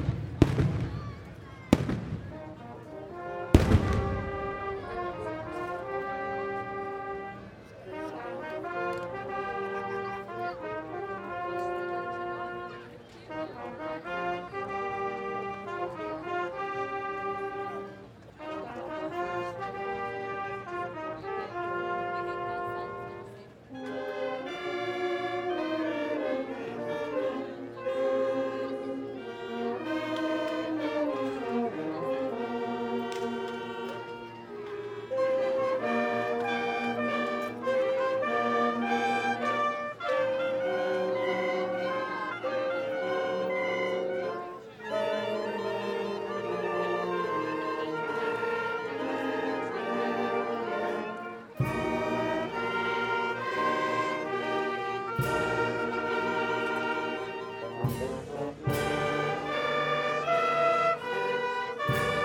Xagħra, Malta - fiesta
summer fiesta in gozo/malta
a bit strange, fireworks are during the day
21 August 1996, 14:30